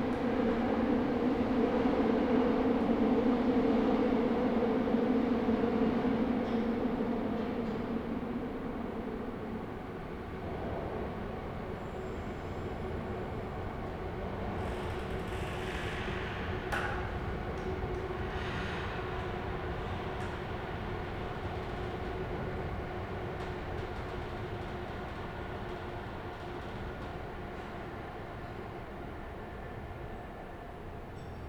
Brussel-Congres, Brussel, België - Brussel Congres Entry Hall
Entry hall of the semi-abandoned Brussel-Congres train station. Trains in the tunnels below, a creaking door leading to the tracks where workmen are working. Towards the end, the climate protesters arrive outside.
Bruxelles, Belgium, 31 January 2019